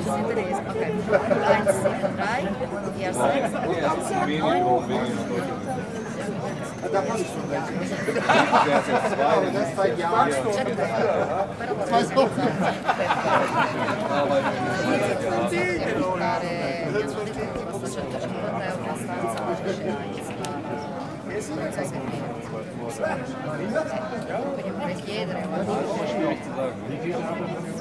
Linden-Nord, Hannover, Deutschland - Nachtleben auf der Limmerstraße
Nachtleben auf der Limmerstraße in Hannover Linden-Nord, aufgenommen von Hörspiel Ad Hoc, Situation: Leute feiern und genießen die Sommernacht, Jemand hat Geburtstag, ein Straßenmusiker taucht auf und spielt ein Ständchen, Aufnahmetechnik: Zoom H4n
Hannover, Germany, 12 July, 22:00